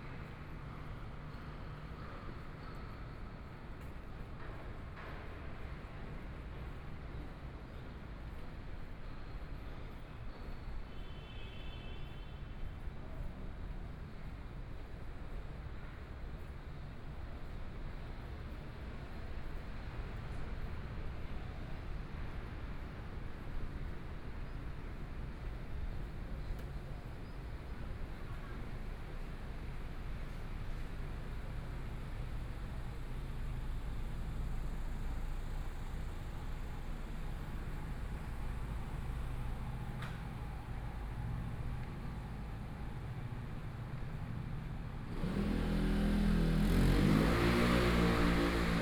中山區中山里, Taipei City - Walking through the streets
Walking through the streets, Traffic Sound, Walking towards the north direction